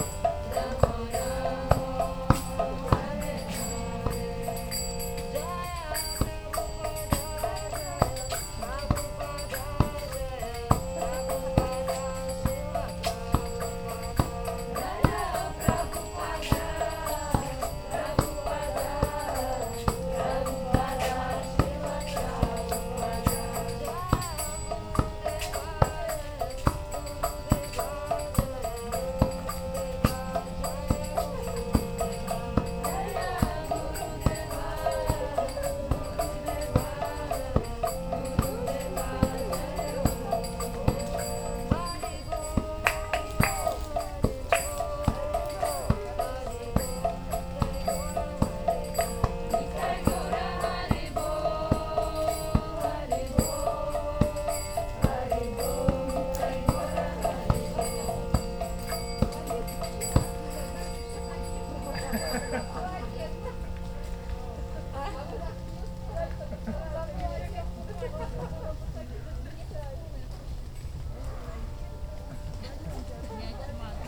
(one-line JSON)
{"title": "Moscow, Filevskaya naberezhnaya - Hare Krishnas in the Rain", "date": "2011-08-20 20:10:00", "description": "Hare Krishnas, Park, Quay, Rain\nMarantz PMD-661 int. mic.", "latitude": "55.74", "longitude": "37.46", "altitude": "131", "timezone": "Europe/Moscow"}